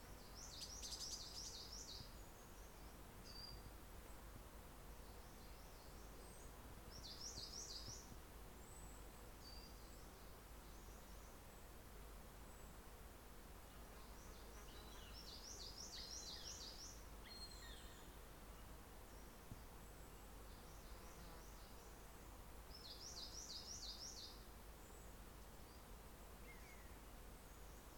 Exeter, UK - Webberton Wood Forestry England
This recording was taken using a Zoom H4N Pro. It was recorded at Webberton Wood part of the Forestry England Haldon Forest. As this recording was taken on the forest track, a Goshawk was seen flying up from the trees. The path's drainage ditches were lined with water mint and the insects can be heard humming.This recording is part of a series of recordings that will be taken across the landscape, Devon Wildland, to highlight the soundscape that wildlife experience and highlight any potential soundscape barriers that may effect connectivity for wildlife.